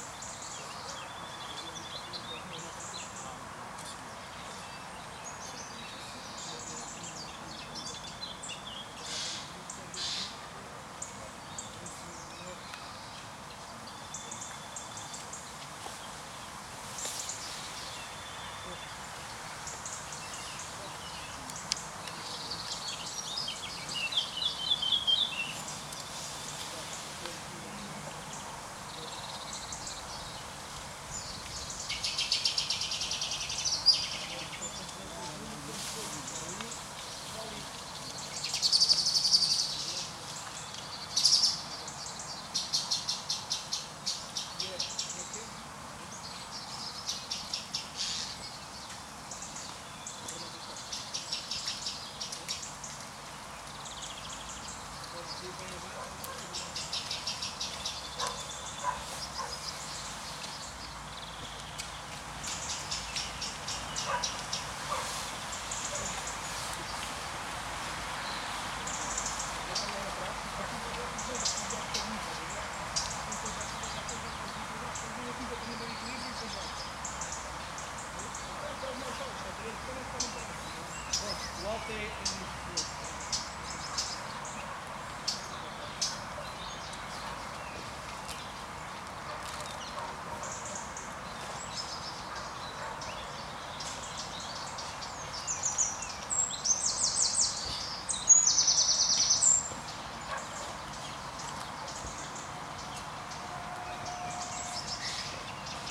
Silence Valley, Olivais Sul 1800 Lisboa, Portugal - Quarantine Park
"Sillence Valley" a park that retains its name againg because of the quarantine period, much less cars, much more birds. Recorded with a SD mixpre6 and a pair of clippy primo 172 in AB stereo configuration.